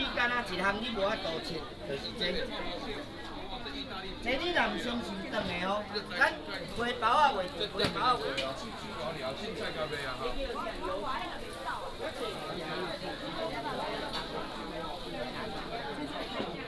Walking in the market, Market Shop Street
Tainan City, Taiwan, 18 February